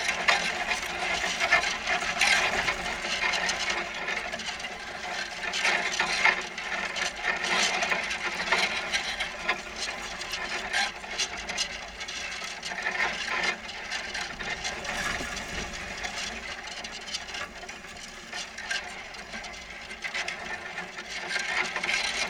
Lithuania, Nolenai, the wire in grass
rusty wire hidden in grass. contact microphones recording